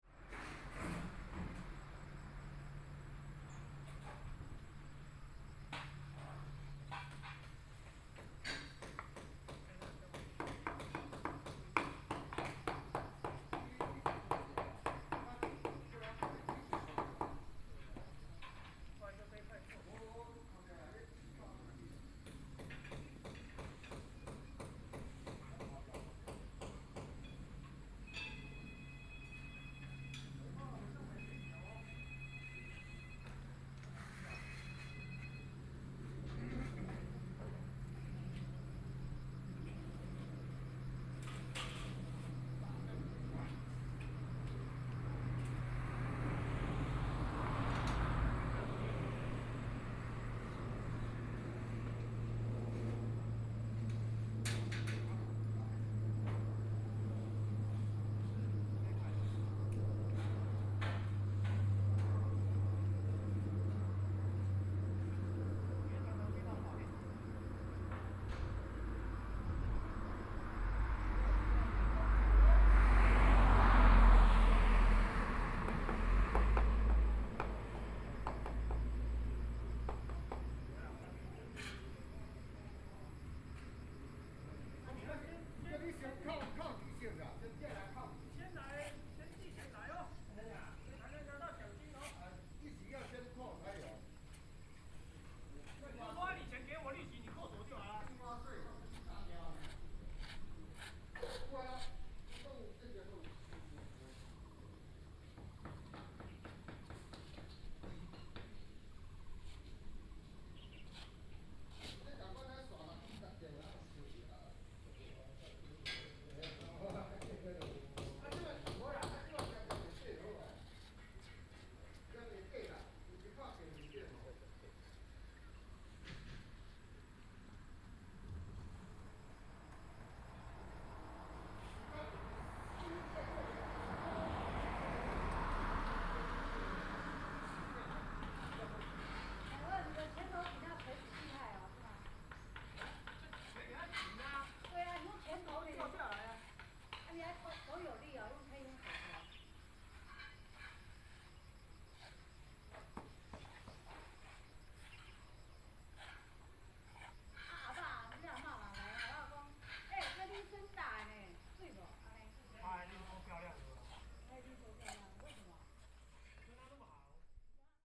沙湖壢, Baoshan Township - next to the reservoir
Next to the reservoir, The sound of the plane, Traffic sound, The workers are refurbishing the house, Binaural recordings, Sony PCM D100+ Soundman OKM II
Hsinchu County, Taiwan, 15 September 2017